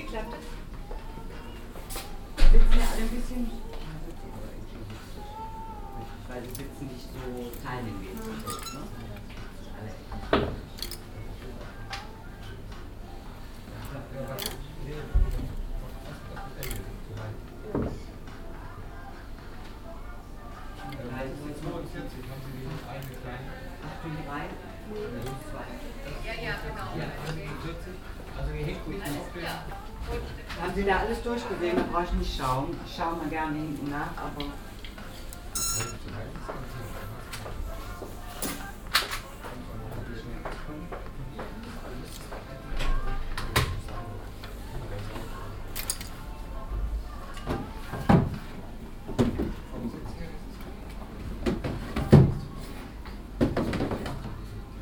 cologne, apostelnstrasse, bekleidungsgeschäft
soundmap: köln/ nrw
atmo in einem bekleidungsgeschäft, mittags
kundengespräche, kleiderbügel, hintergrundsmusik
project: social ambiences/ listen to the people - in & outdoor nearfield recordings
4 June, ~12:00